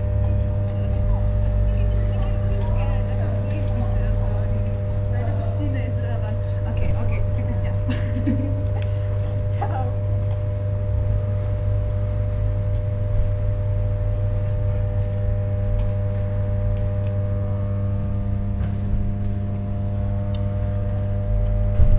Tkalski prehod, Maribor - Pozor! Visoka napetost! Smrtno nevarno!